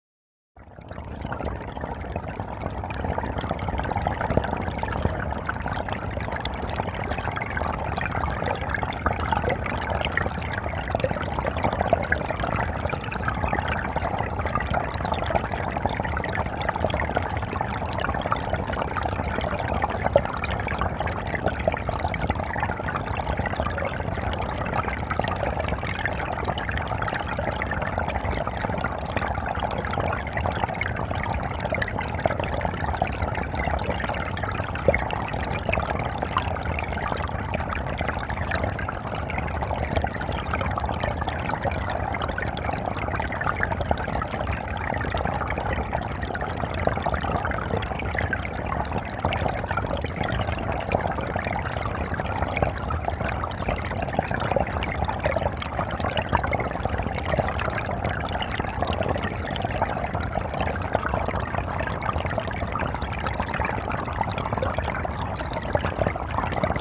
Walking Holme Stream
Stereo hydrophone recording of a small stream below Holme Moss summit.
Kirklees, UK, April 2011